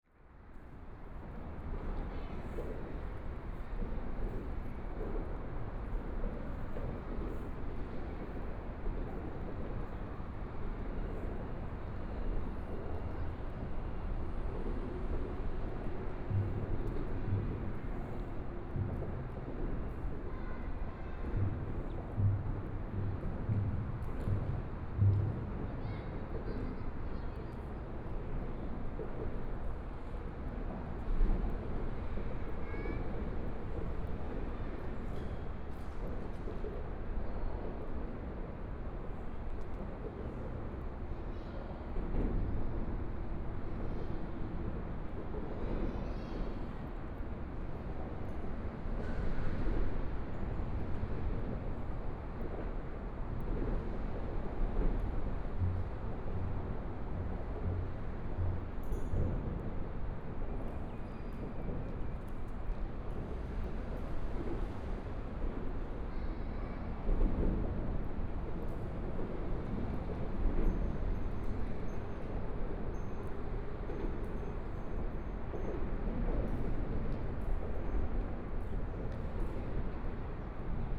Standing beneath the freeway lanes, Sound from highway traffic, Traffic Sound, Sound from highway, Aircraft flying through, Birds singing, Binaural recordings, Zoom H4n+ Soundman OKM II
16 February, 16:27